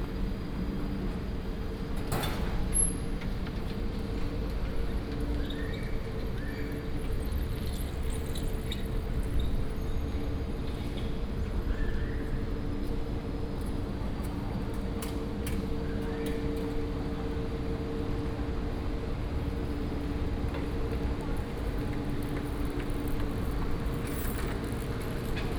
Air conditioning noise, bicycle, In the university
22 February 2016, 11:42